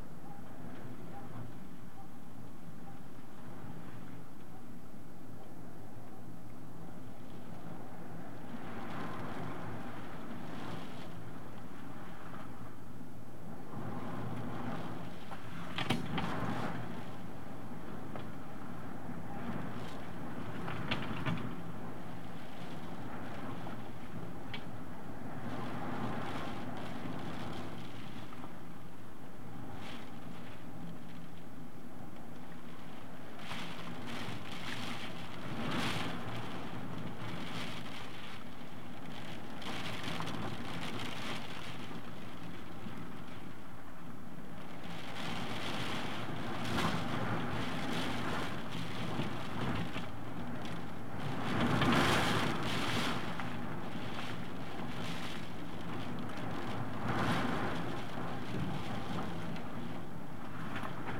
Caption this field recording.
Lorenzo Hurricane beating the window where i was sleeping at a frightening night.